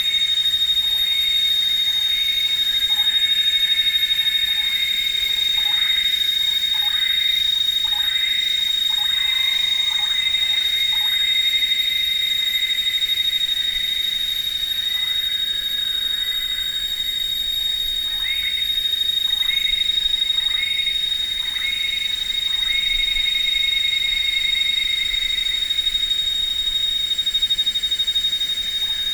Bukit Teresek Hill, rainforest ambience around noon
(zoom h2, binaural)